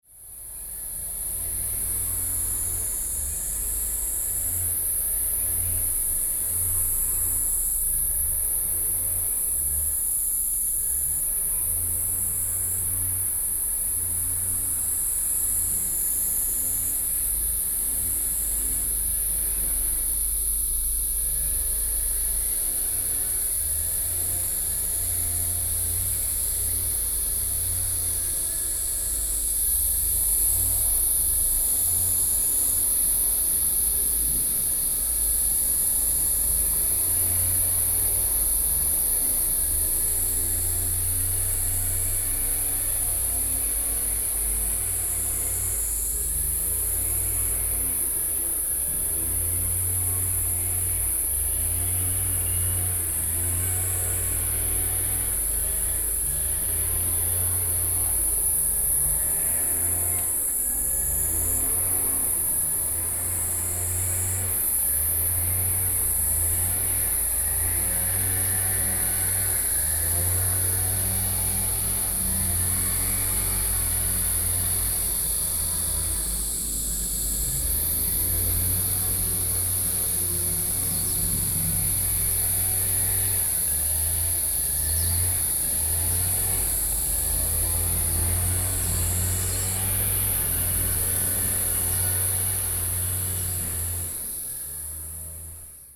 獅頭山公園, Jinshan District, New Taipei City - Cicadas cry
Cicadas cry, Mower noise
Sony PCM D50+ Soundman OKM II
Jinshan District, New Taipei City, Taiwan, 11 July 2012, ~09:00